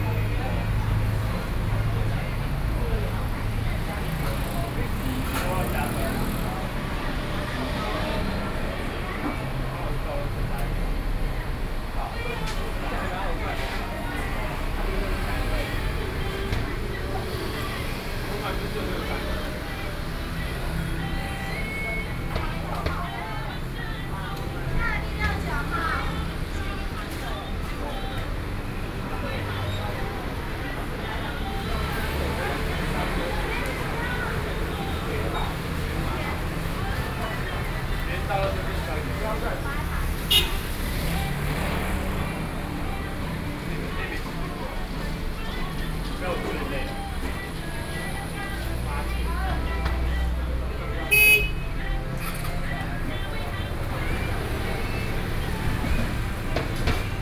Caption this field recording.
Beverage shop, in front of the intersection, Traffic Noise, Sony PCM D50 + Soundman OKM II